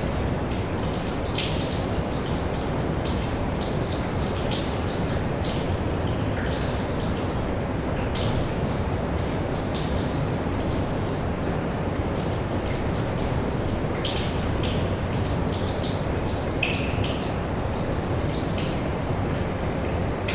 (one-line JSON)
{"title": "Valenciennes, France - Sewers, underground waterfall", "date": "2018-12-24 09:30:00", "description": "Into the Valenciennes sewers, distant recording of an underground waterfall. Just near the waterfall, you can't hear you screaming as it's very noisy !", "latitude": "50.35", "longitude": "3.53", "altitude": "30", "timezone": "Europe/Paris"}